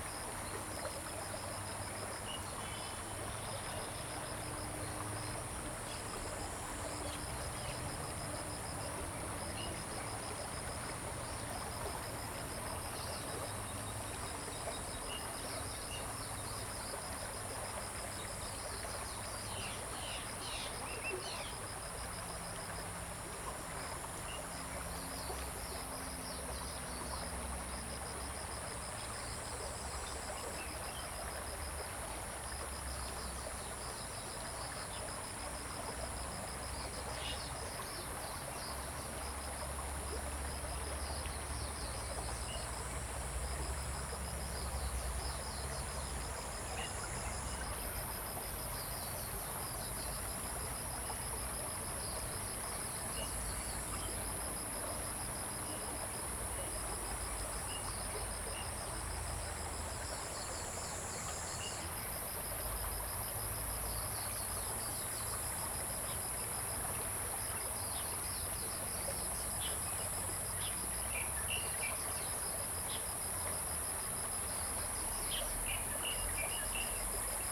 TaoMi River, 桃米里 埔里鎮 - Stream and Birds
Stream and Birds, Bird calls
Zoom H2n MS+XY